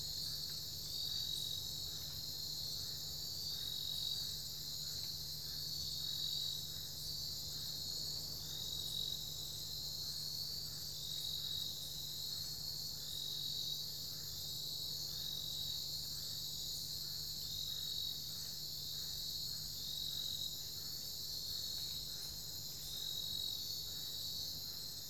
i was shown to this location by my hosts at cape trib farmstay, sam, jordan and andrew, on my last night in cape tribulation as this area was privately owned by them. while i wanted to record further away from cape trib they strongly suggested this area and since i could feel they didn't feel like driving further away i thought i would give the place a go. the recording ended up being a bit of a dissapointment for me as you could still hear the road very clearly as well as the drones of the generators from the town. fortunately when jordan and i went to pick up the microphones a few hours later he felt like driving to the marrdja mangroves about 20 minutes drive away where i originally wanted to record and i ended up getting a fantastic recording there at one in the morning! i still do like this recording though.
recorded with an AT BP4025 into an Olympus LS-100.
4 January 2014, 22:00